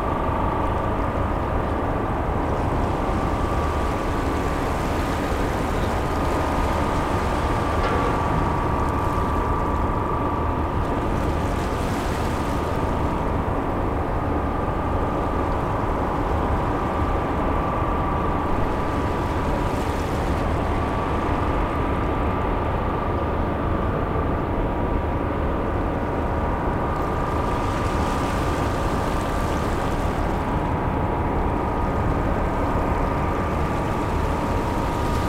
Port de Plaisance des Sablons, Saint-Malo, France - Arrival of the ferry at the Saint-Malo seaport 02
Arrival of the ferry at the Saint-Malo seaport
Nice weather, sunny, no wind, calm and quiet sea.
Recorded from the jetty with a H4n in stereo mode.
Motors from the ferry.
Machines from the ramp for passengers.
People passing by, adults and kids talking.
Ramp for passengers